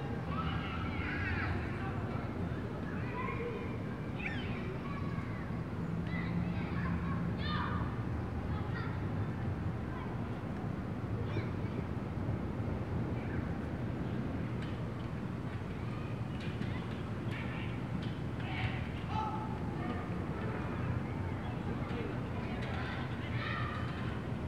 Bolton Hill, Baltimore, MD, USA - football game in park
recorded at far corner of park where a football game and children on the playground could be heard.